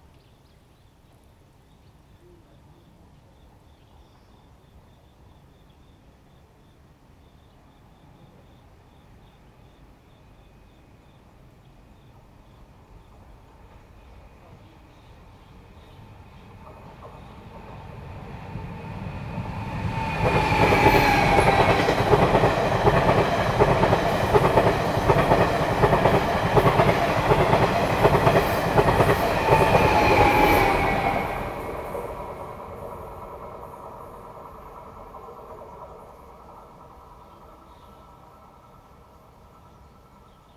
Birds sound, train runs through, Traffic sound, The plane flew through, Near the railroad tracks, Binaural recordings, Zoom H2n MS+XY